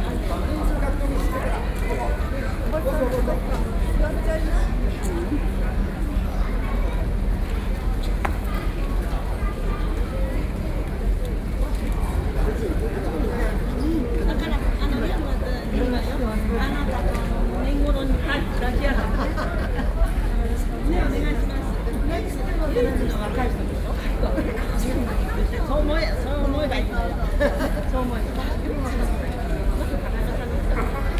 Taipei, Taiwan(TSA) - in the Airport
Songshan District, Taipei City, Taiwan, 9 November 2012